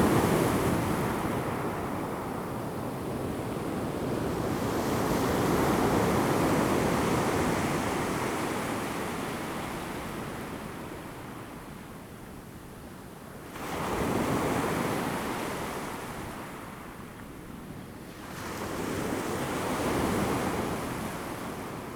Taitung City, Taiwan - Sound of the waves
Sound of the waves, The weather is very hot
Zoom H2n MS+XY